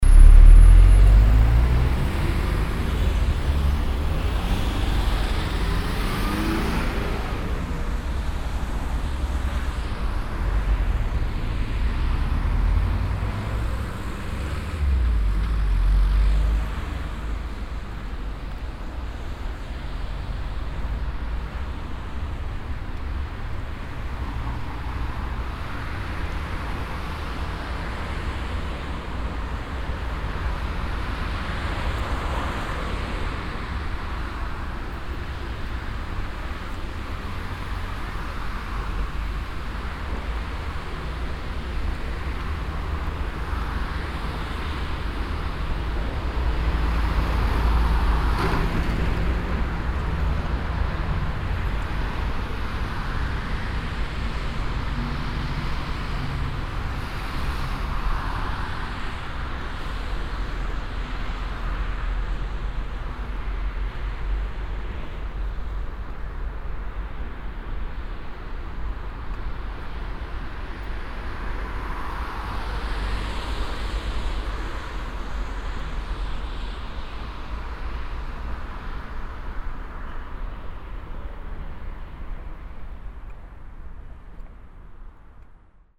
cologne, deutz, messekreisel an tankstelle
messekreisel morgens, diverse pkws, türen schlagen an tankstelle, ein fussgängerüberweg
soundmap nrw: social ambiences/ listen to the people - in & outdoor nearfield recordings